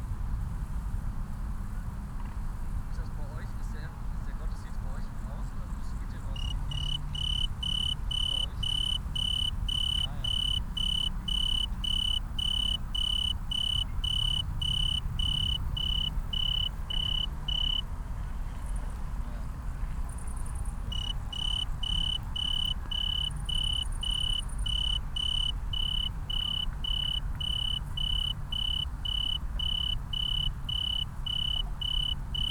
approaching a single tree cricket in the grass until I could see it. Later the cricket moves away, and so did the recordist
(Sony PCM D50, DPA4060)